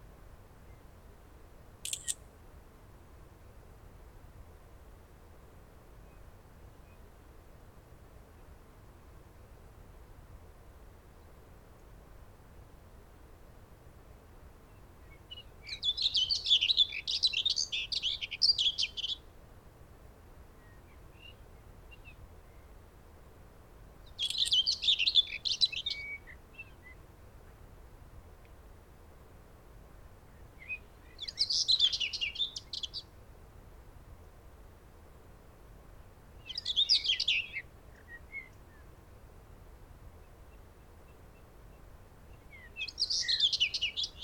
Im Dornbuschwald, Insel Hiddensee, Deutschland - Nightingale and blackbirds
Nightingale and blackbirds at Dornbusch Hiddensee
recorded with Olympus L11